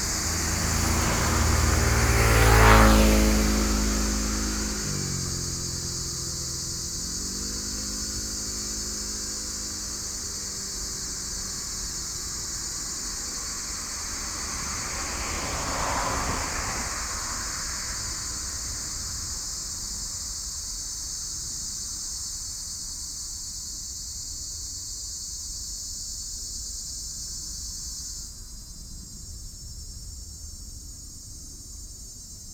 Cicadas cry, Bird calls, traffic sound
Sony PCM D50
4 July 2012, 10:31